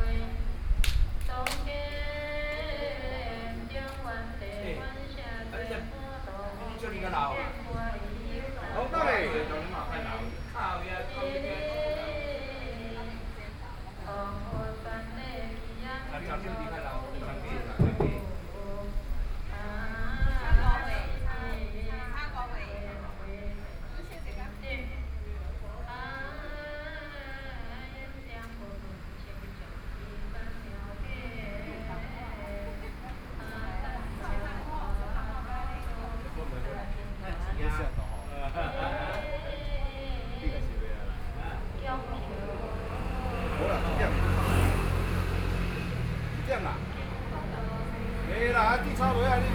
Ln., Sec., Zhongyang N. Rd., Beitou Dist. - Puja
Puja, Sony PCM D50 + Soundman OKM II